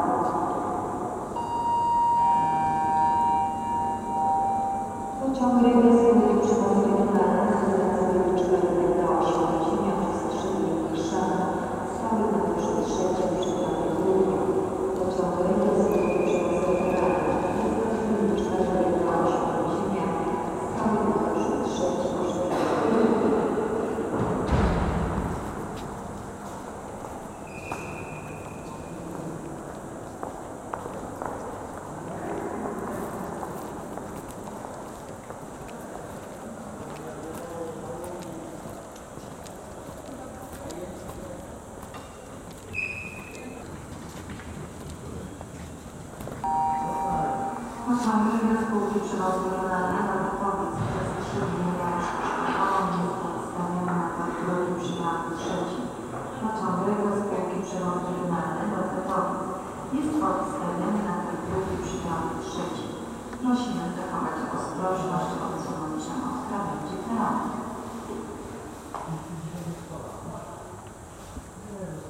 krakow glowny - warten...waiting....esperando..
eingangshalle, dann links abbiegen in den wartesaal
January 2011